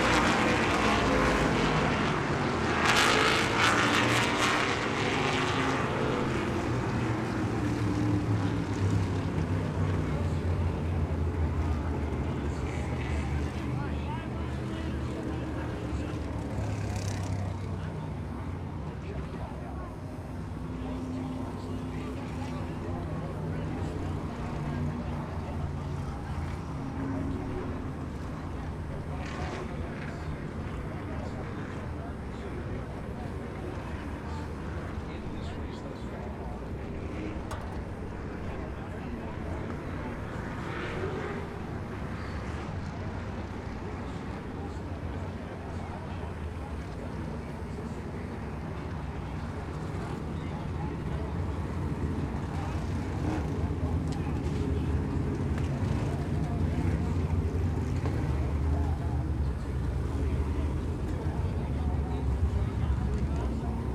Stafford Speedway - Open Modified Feature
The sound of 23 Open Modifieds at Stafford Speedway in their 81 lap feature race